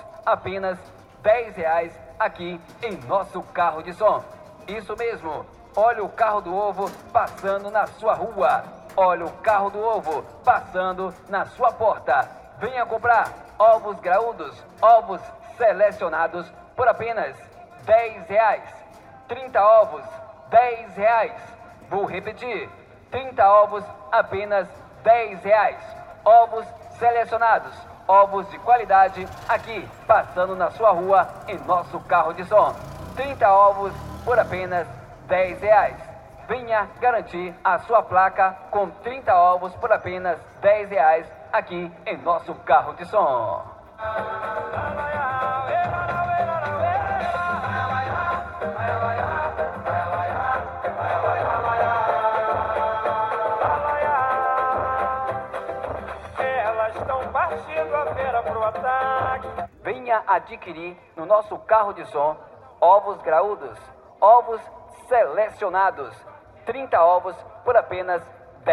R. Anastácio, Cachoeira - BA, 44300-000, Brasil - Carro do ovo - egg car
Sábado de feira, carro do ovo parado no Beco da Morte.
Market place at Saturday, egg cart stopped at the Beco da Morte.